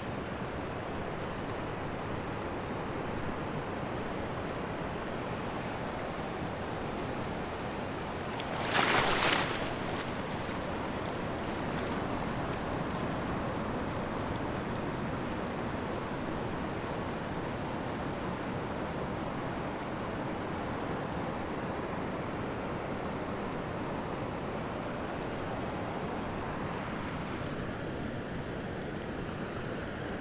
Praia Magoito, Sintra, Portugal, waves on rocks
waves breaking on the rocks, Praia do Magoito, Ericeira, Sintra, water